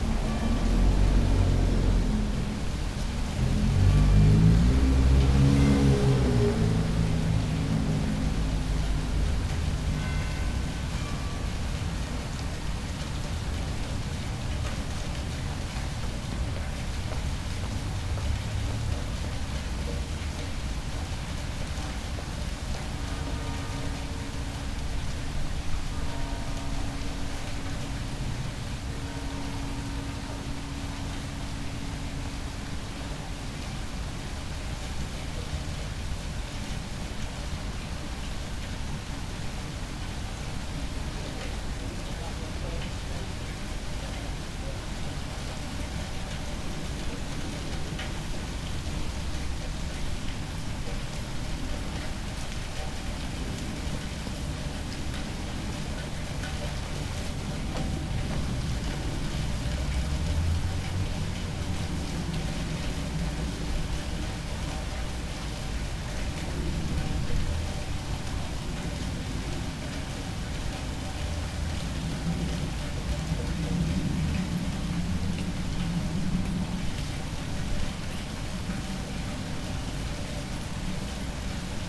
Cultural Quarter, Southampton, Southampton, Southampton, UK - fountain outside the Art Gallery
Fountain outside Southampton Municipal Art Gallery (no longer working).